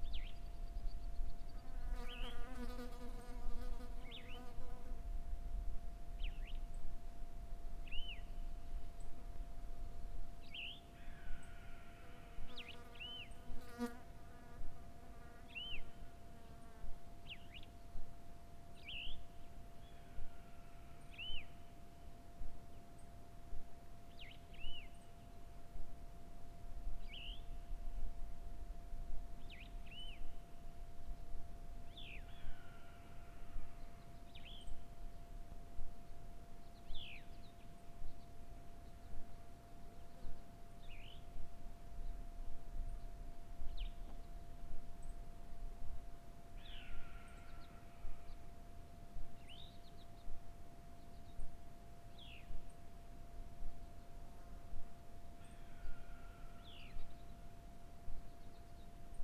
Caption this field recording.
Pool Creek Canyon ambience, on the summer Solstice